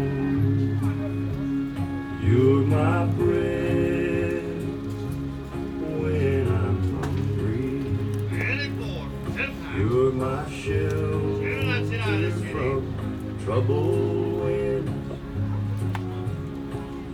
London, Wentworth Street market, CD seller